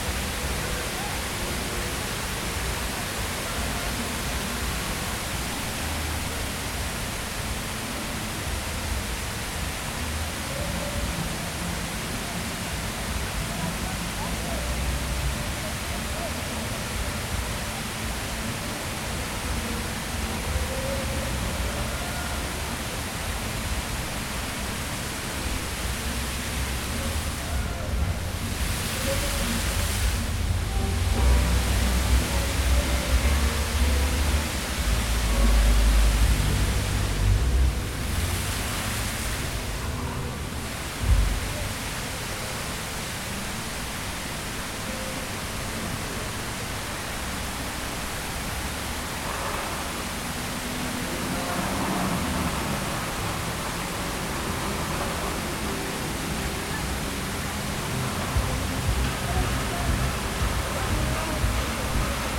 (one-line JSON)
{"title": "E Randoplh, Chicago, IL, USA - Street Level", "date": "2017-06-09 14:40:00", "description": "Using a zoom recording device, walked along the fountain at street level.", "latitude": "41.88", "longitude": "-87.62", "altitude": "179", "timezone": "America/Chicago"}